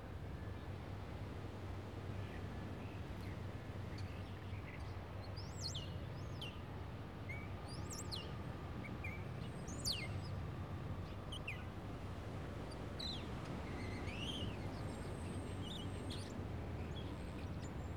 Coney Island, Brooklyn, NY, USA - Riegelmann Boardwalk
Ocean Sounds.
Zoom H4n